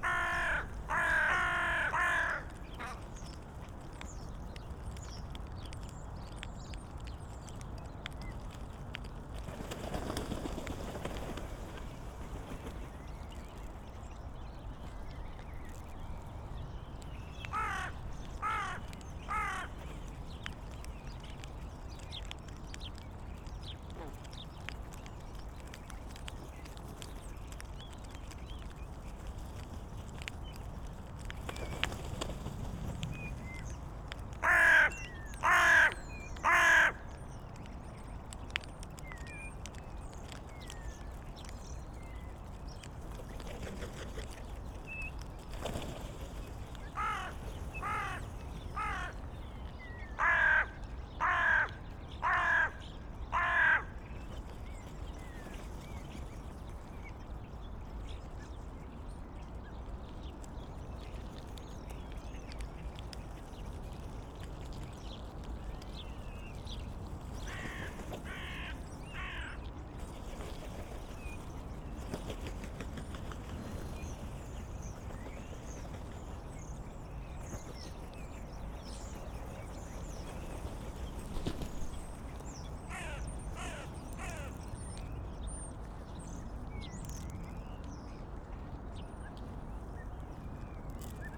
Tempelhofer Feld, Berlin - dun crows
Berlin, Templehofer Feld, historic airport area, Dun crows picking food, starlings in tree behind
(SD702, AT BP4025)
Berlin, Germany, 22 December, 15:25